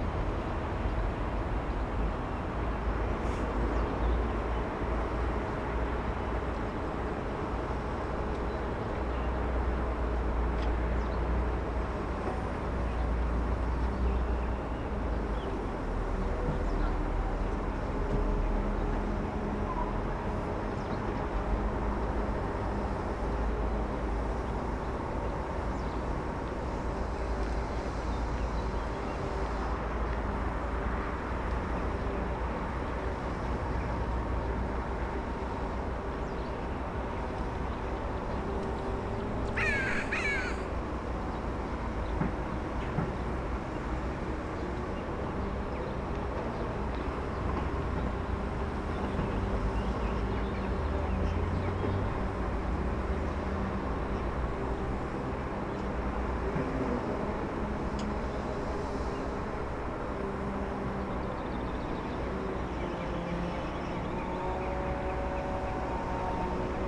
Żołnierska, Olsztyn, Poland - Obserwatorium - Północ
Recorded during audio art workshops "Ucho Miasto" ("Ear City"):